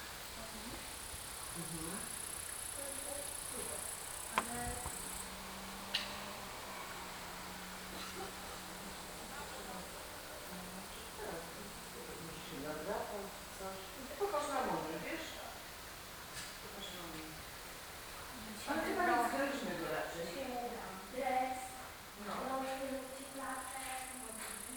Różana, Sokołowsko, Poland - Wind in Trees
Vítr ve větvích, koník, lidé ve vile Rožana